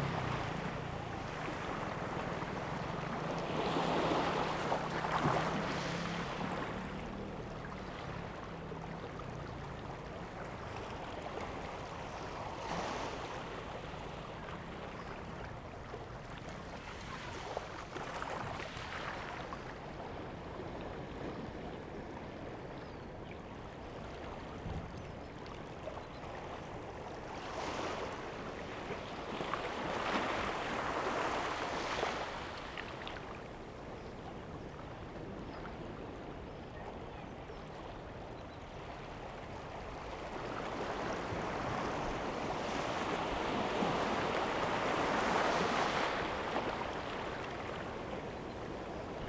Small fishing port, Birdsong, Sound of the waves
Zoom H2n MS +XY
Taitung County, Taiwan, 8 September